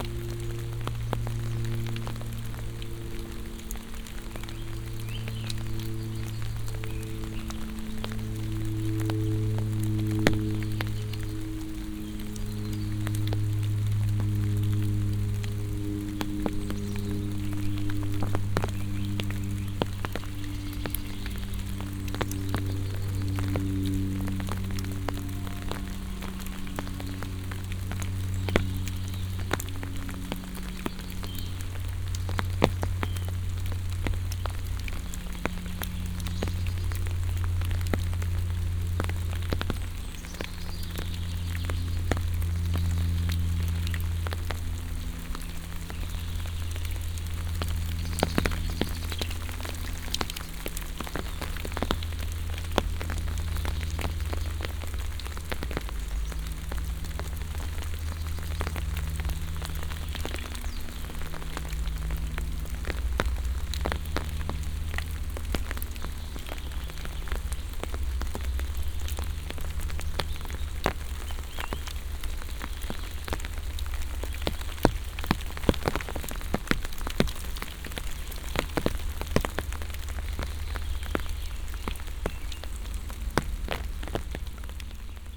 Morasko, road towards the nature reserve - rain deflector
(binaural) crunch of rain drops falling from the trees on my umbrela. turbulent plane roar. wraped in a web of bird chirps.